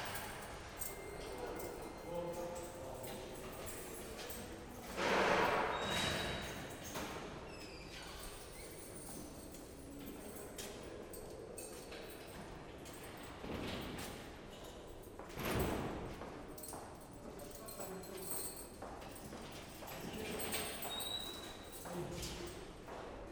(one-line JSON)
{"title": "dans les couloir de la prison", "description": "enregistré lors du film Fleur de sel darnaud selignac", "latitude": "46.21", "longitude": "-1.36", "altitude": "8", "timezone": "Europe/Berlin"}